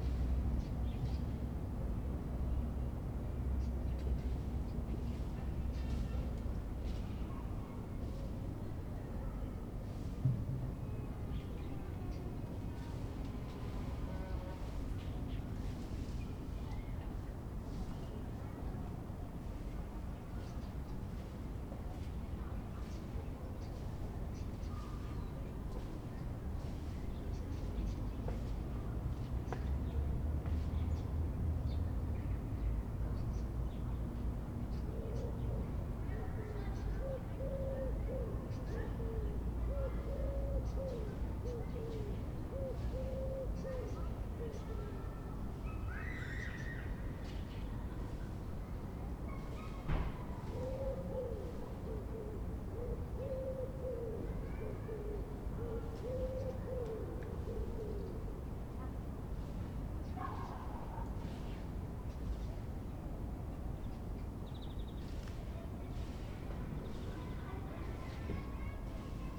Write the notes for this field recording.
wood cutting with a chainsaw, the city, the country & me: august 3, 2011